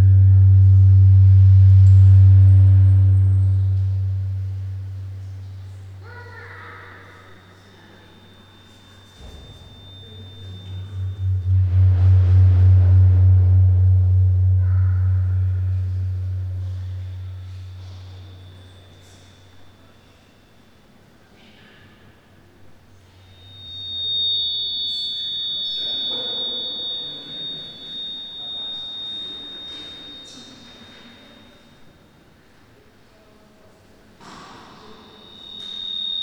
Cesena FC, Italia - sound demapping
grafic EQ and feedback in bad acoustic situation
Province of Forlì-Cesena, Italy, 31 August 2012